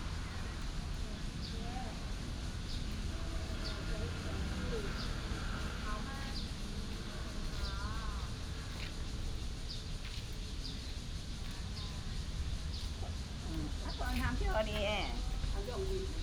Fudan Park, Pingzhen Dist. - in the park
Cicada cry, birds sound, The elderly, traffic sound
26 July 2017, ~07:00